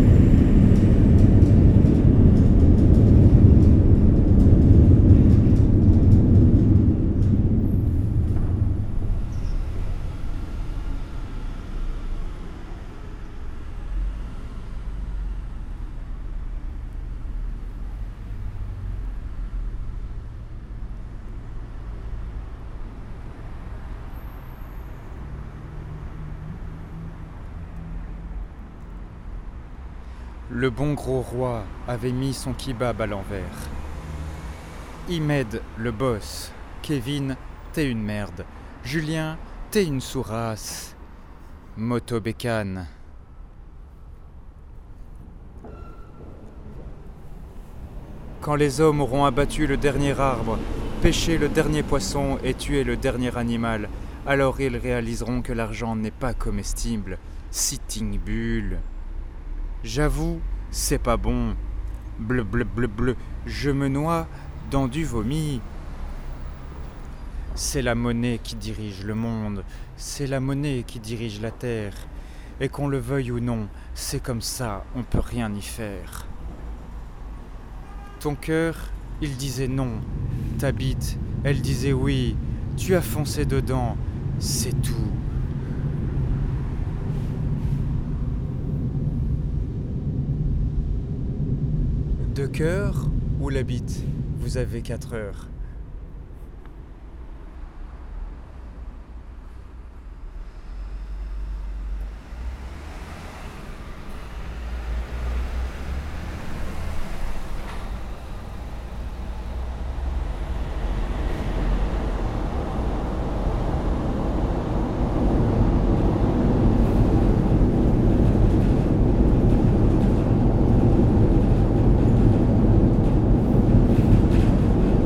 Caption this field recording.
Recording of the RER trains driving on the bridge just above. Just after, I read the very stupid things written on the walls, as a completely wacky poem.